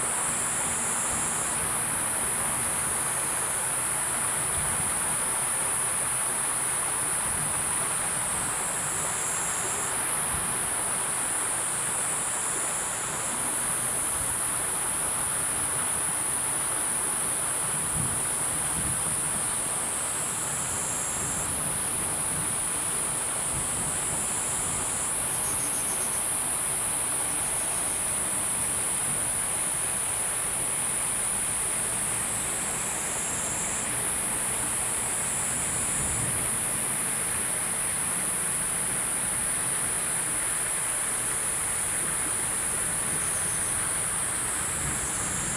桃米溪, 紙寮坑, 桃米里 - Sound of water and Insect
Bridge, Insect sounds, Sound of water, The sound of thunder
Zoom H2n MS+XY +Spatial audio